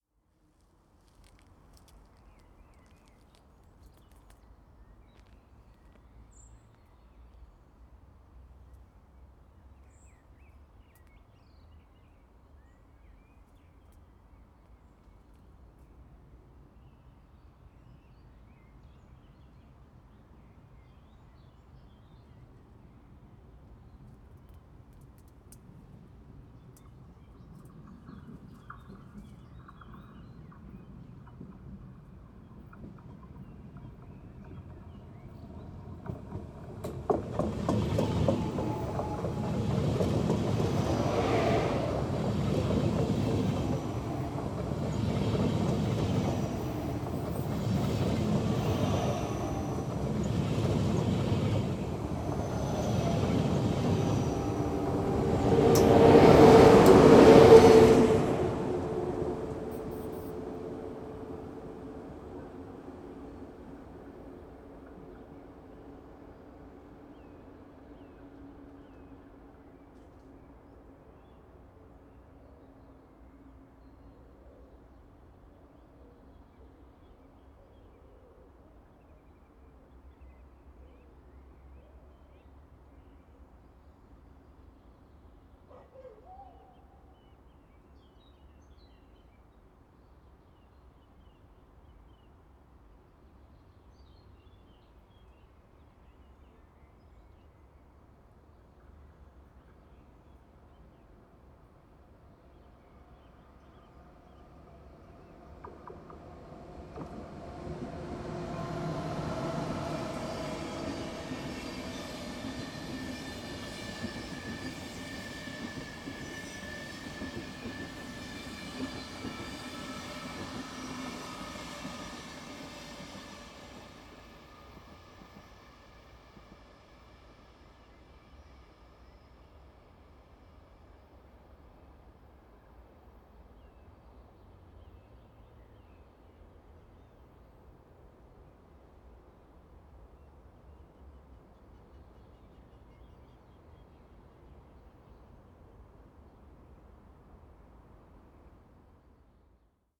koeln, mediapark, trackbed - train passing
nice abandoned area along the tracks. lots of rabbits. intercity express passing slowly. warm spring evening.
Köln, Deutschland, 17 June